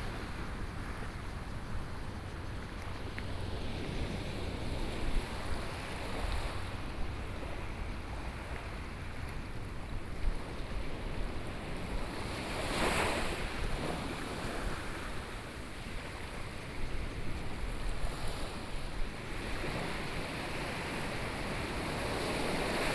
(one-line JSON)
{"title": "Donghe Township, Taitung County - At the seaside", "date": "2014-09-06 10:03:00", "description": "The weather is very hot, Sound waves", "latitude": "22.83", "longitude": "121.19", "altitude": "6", "timezone": "Asia/Taipei"}